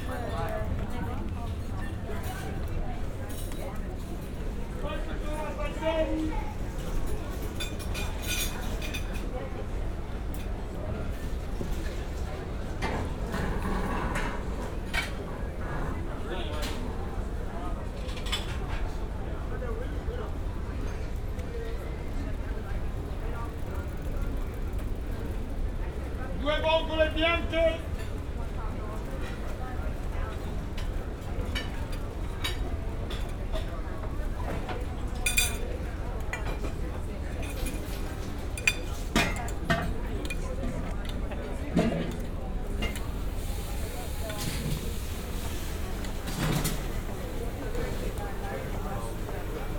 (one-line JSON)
{"title": "Monterosso Al Mare SP - through the crowd", "date": "2014-09-07 13:07:00", "description": "(binaural) making my way through the crowd packed on the tight streets of Monterosso. Passing by the many restaurants and cafes.", "latitude": "44.15", "longitude": "9.65", "altitude": "25", "timezone": "Europe/Rome"}